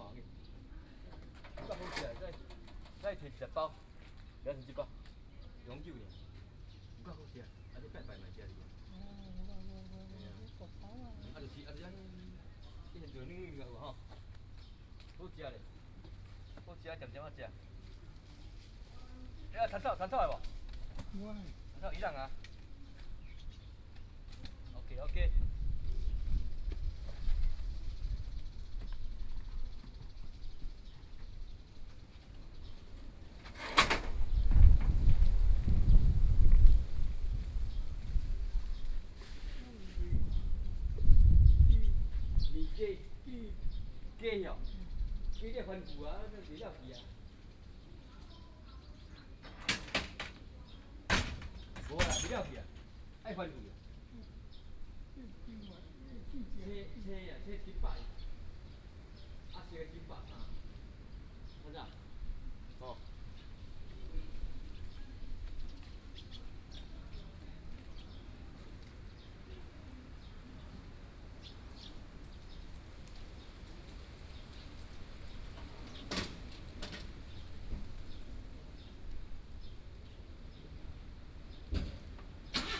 鎮安宮, 壯圍鄉新南村 - In the temple plaza
In the temple plaza, Traffic Sound, Driving a small truck selling produce and live everyday objects
Sony PCM D50+ Soundman OKM II